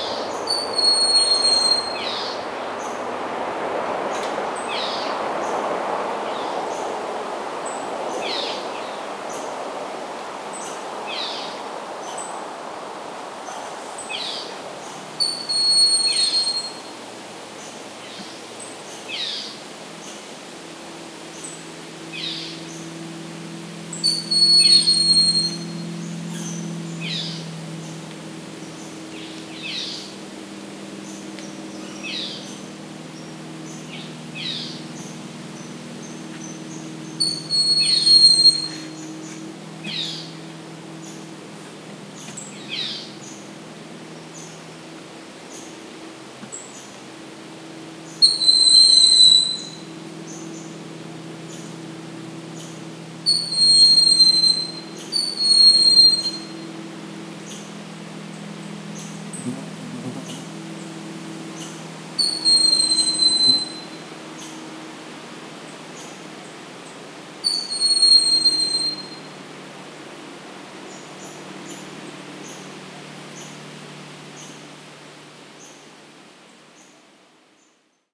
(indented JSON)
{
  "title": "Mikisew Provincial Park, Ontario, Canada - Broad-winged hawk",
  "date": "2016-07-24 19:00:00",
  "description": "Broad-winged hawk on top of tall tree, several other species heard. Also passing car, distant motorboats. Zoom H2n with EQ and levels post.",
  "latitude": "45.82",
  "longitude": "-79.51",
  "altitude": "363",
  "timezone": "America/Toronto"
}